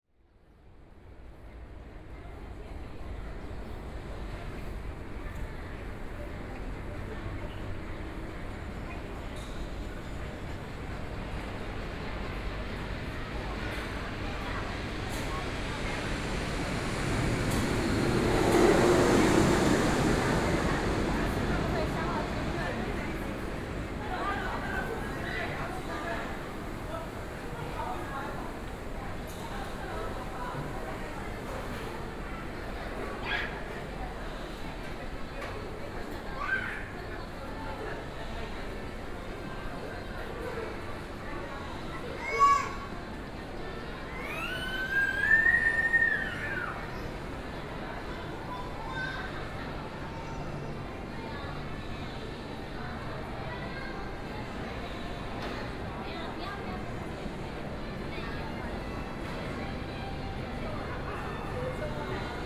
Taipei EXPO Park - soundwalk
Food Street area, Aircraft flying through, Binaural recordings, Sony PCM D50 + Soundman OKM II
Zhongshan District, Taipei City, Taiwan, 9 October